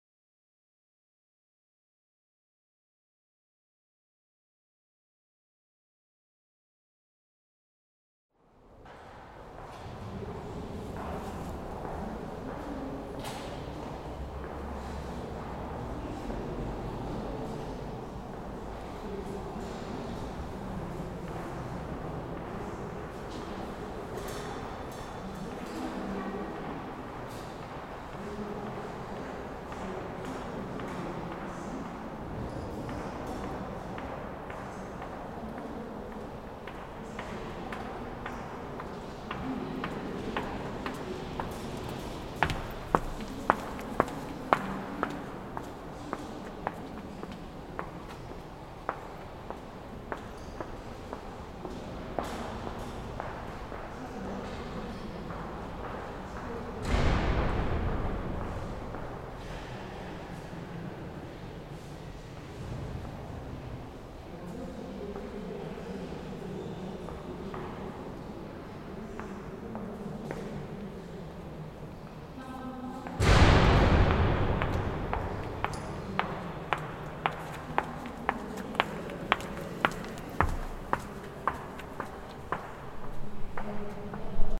In an abbey in Caen, Normandy, people visiting and walking, recorded with Zoom H6